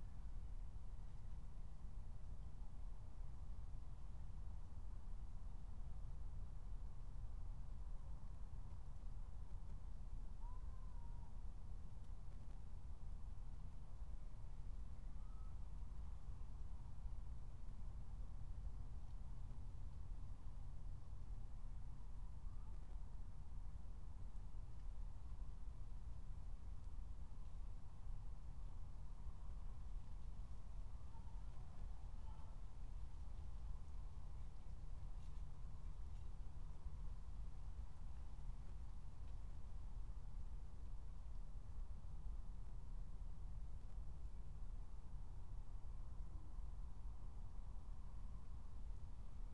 23:03 Berlin, Tempelhofer Feld